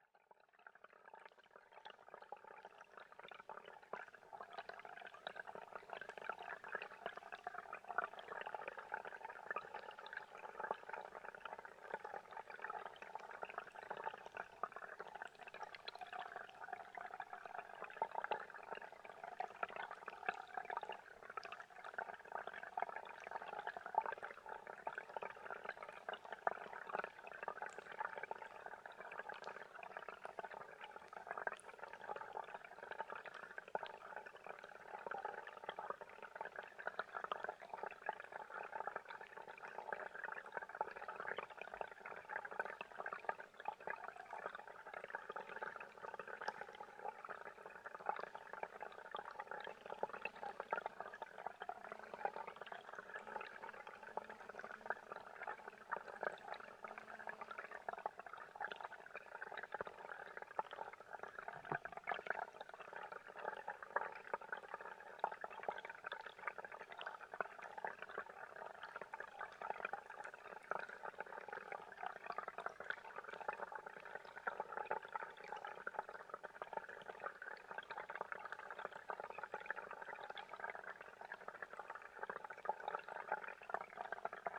{
  "title": "Lithuania, Utena, litle stream through hydrophone, WLD",
  "date": "2011-07-18 13:00:00",
  "description": "the small brooklet I re-visit constantly...this time - underwater recording. #world listening day",
  "latitude": "55.53",
  "longitude": "25.59",
  "altitude": "114",
  "timezone": "Europe/Vilnius"
}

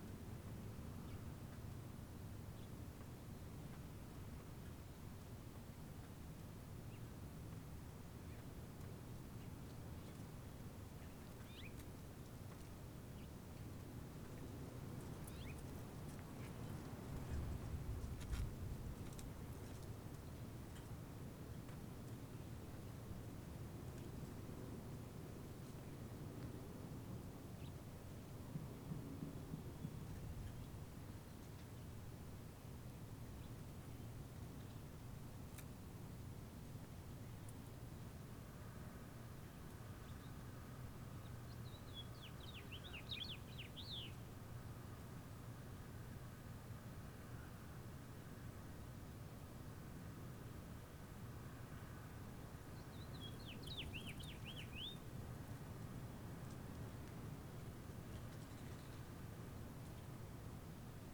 {
  "title": "Waters Edge - Spring Afternoon",
  "date": "2022-04-02 12:43:00",
  "description": "Microphone in the front of the house facing the street. Birds, traffic, planes, and the neighbors can be heard.",
  "latitude": "45.18",
  "longitude": "-93.00",
  "altitude": "278",
  "timezone": "America/Chicago"
}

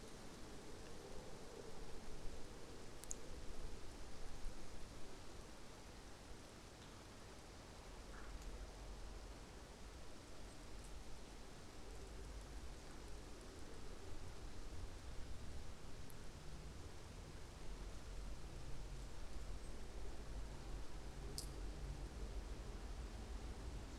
{
  "title": "Lithuania, Vyzuonos, under the bridge",
  "date": "2012-10-15 16:50:00",
  "description": "distant folliage, some drops of water, silences and car above",
  "latitude": "55.57",
  "longitude": "25.50",
  "altitude": "92",
  "timezone": "Europe/Vilnius"
}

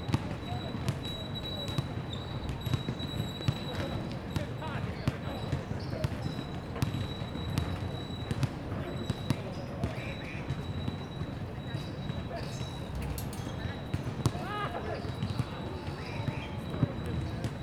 {
  "title": "垂葉榕道, National Taiwan University - Chirp and Basketball Sound",
  "date": "2016-03-04 15:47:00",
  "description": "Chirp and Basketball Sound, Bicycle sound\nZoom H2n MS+XY",
  "latitude": "25.02",
  "longitude": "121.54",
  "altitude": "12",
  "timezone": "Asia/Taipei"
}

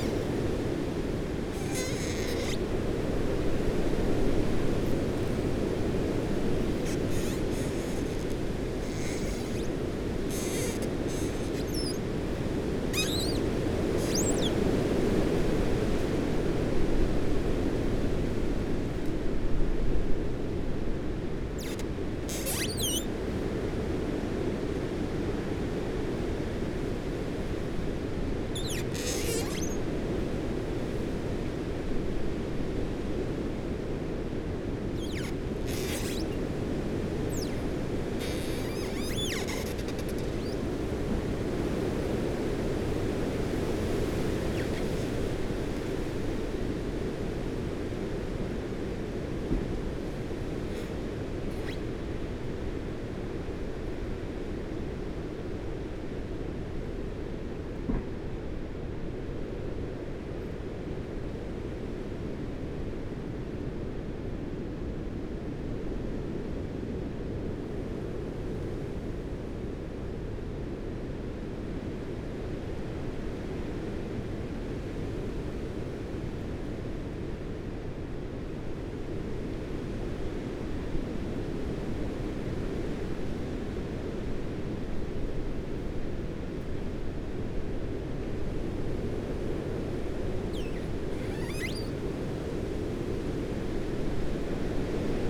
{
  "title": "Luttons, UK - Humpback tree ...",
  "date": "2016-01-29 08:46:00",
  "description": "Branches rubbing and creaking in a gale ... lavalier mics in a parabolic ...",
  "latitude": "54.12",
  "longitude": "-0.57",
  "altitude": "99",
  "timezone": "Europe/Berlin"
}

